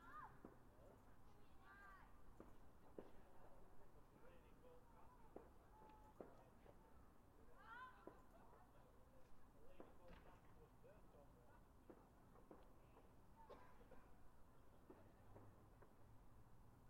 Guy Fawkes Bonfire Party
Sounds of bnfire, people and fireworks for November 5th Guy Fawkes Bonfire celebrations
Chesterfield, Derbyshire, UK